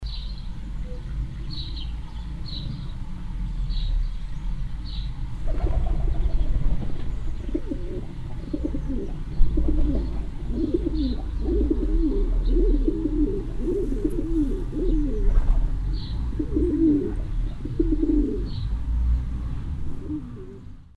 eschweiler, pigeon cage
A short recording of a pigeon cage in a private garden.
Eschweiler, Taubenkäfig
Eine kurze Aufnahme eines Taubenkäfigs in einem privaten Garten.
Eschweiler, pigeonnier
Court enregistrement d’un pigeonnier dans un jardin privé.
Project - Klangraum Our - topographic field recordings, sound objects and social ambiences
Eschweiler, Luxembourg, 3 August